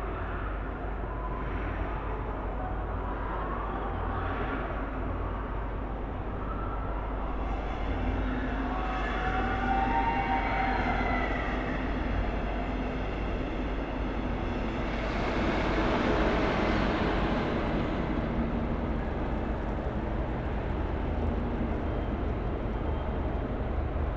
berlin, littenstr. - diffuse sound field in courtyard
diffuse sound field: echoes and reflections of the nearby christmas market fun fair. a helicopter appears at the end, maybe a sign for the increased security measures against terroristic threads this year...
Berlin, Deutschland, 5 December 2010, ~21:00